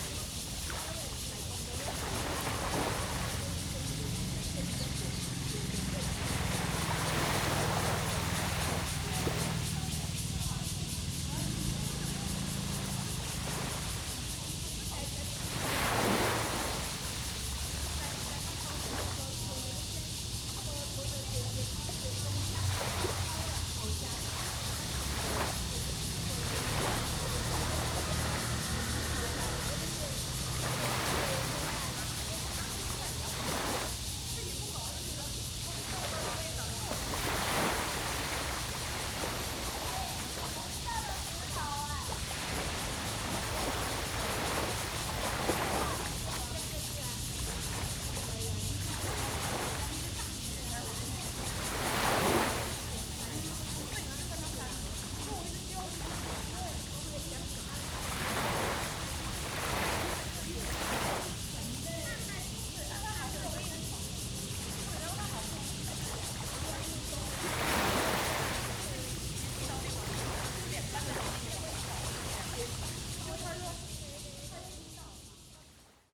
Cicadas cry, Tide
Zoom H2n MS+XY